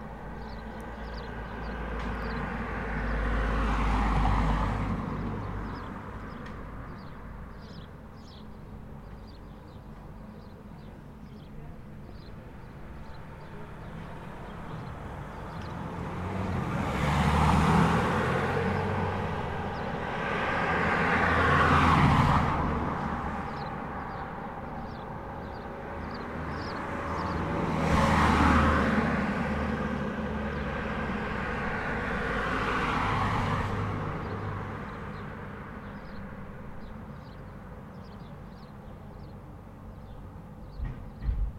La circulation dans Cusy zone 30km/h, voitures thermiques, hybride, camion, motos, vélo, vélo électrique, toujours quelques moineaux pour piailler.
Auvergne-Rhône-Alpes, France métropolitaine, France, 2 August